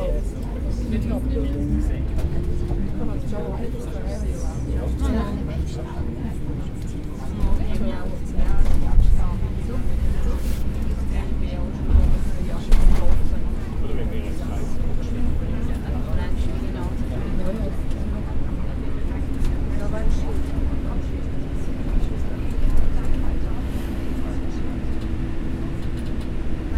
Bus nach Leuk im Wallis
Busgeräusche nach Leuk für Umsteigen in Zug
Inden, Schweiz, 9 July 2011